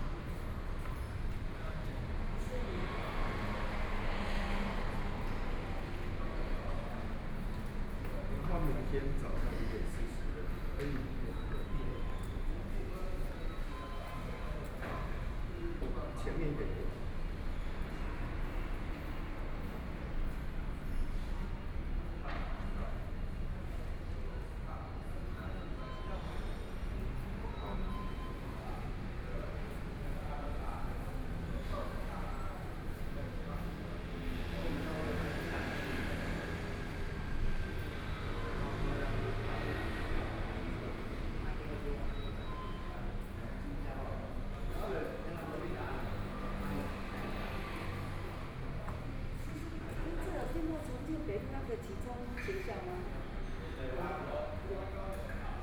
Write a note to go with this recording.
in the Bus Transfer Station, Zoom H4n+ Soundman OKM II